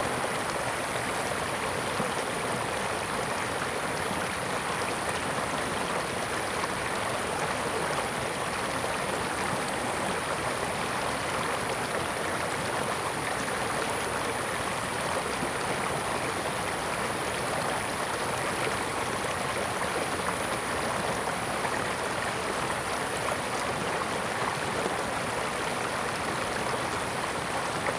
頂草南, 埔里鎮桃米里, Taiwan - In the middle of the river
Bird sounds, In the middle of the river, Sound of water
Zoom H2n MS+XY
Nantou County, Taiwan